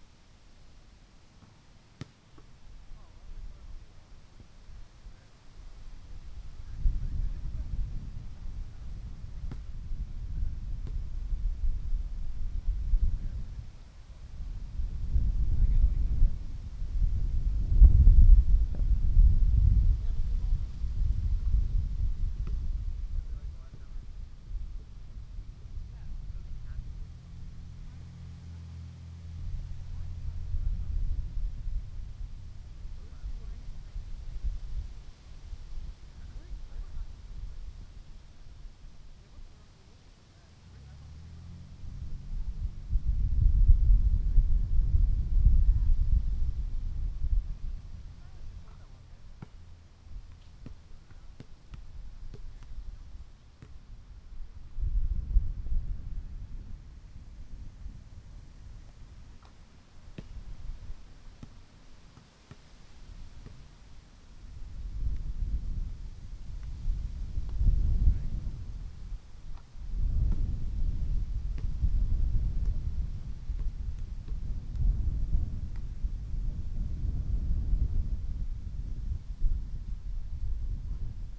wind, volleyball, basketball, park, talking